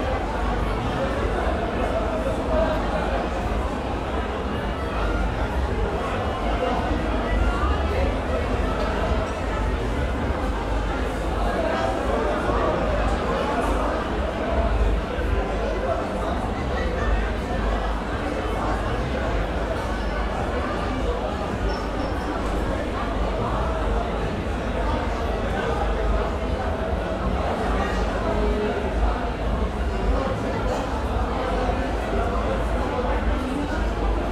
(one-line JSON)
{"title": "Friday night in Beyoglu, Istanbul", "date": "2010-02-20 13:05:00", "description": "recording made from my window of the club and street noise on a Friday night", "latitude": "41.03", "longitude": "28.97", "altitude": "77", "timezone": "Europe/Tallinn"}